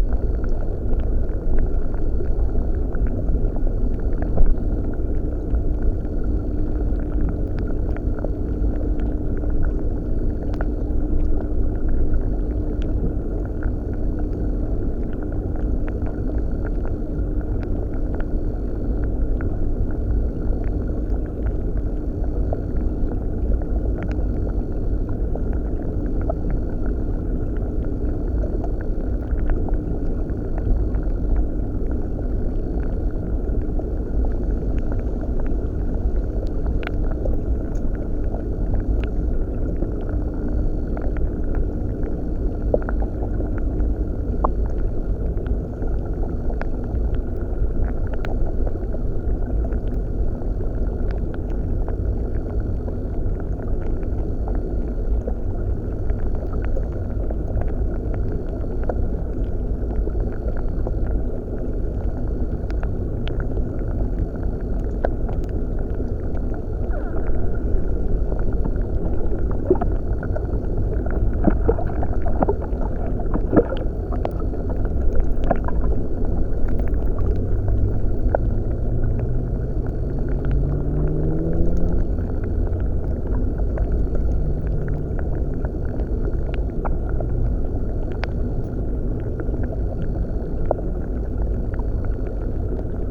Birštonas, Lithuania, the dam underwater
Underwater microphone near small dam